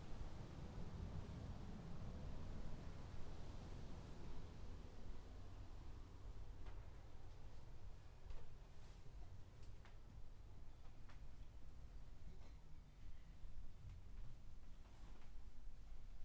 Talmastraat, Amsterdam, Nederland - Parkieten/ Parakeets
(description in English below)
Deze wijk zit zomers vol parkieten. Ze zitten in de bomen en bewegen zich in een grote groep van de ene naar de andere boom. De straat wordt een soort landingsbaan waarop de parkieten in een razend tempo opstijgen en voorbij zoeven.
In the summer this neighbourhood is full of parakeets. They sit in the trees and move in large groups from one tree to another. This street is used as a runway in which the parakeets pace off in high speed.
Amsterdam, The Netherlands, 20 September, 15:00